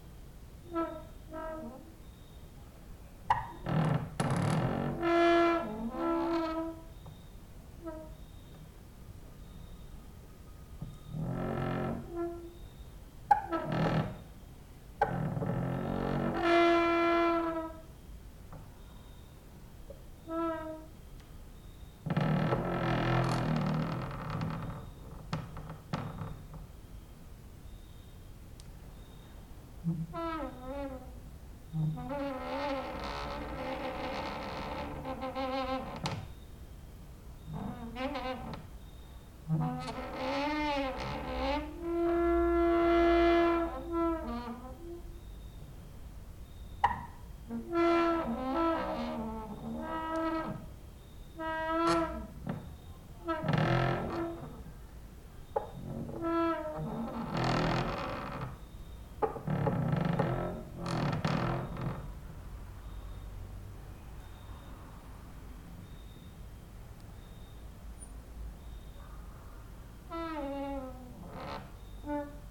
cricket outside, exercising creaking with wooden doors inside